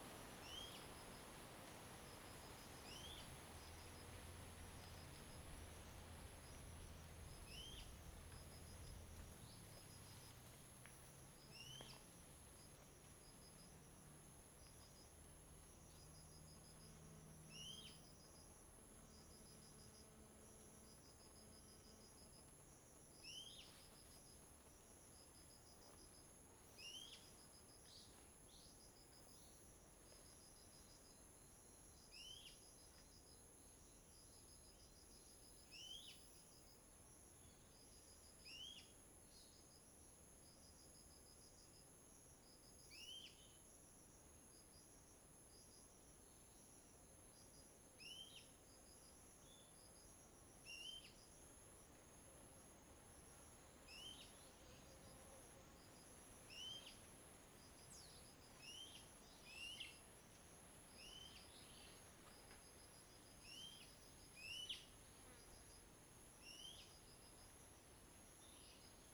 Birds singing, In the woods, Wind
Zoom H2n MS +XY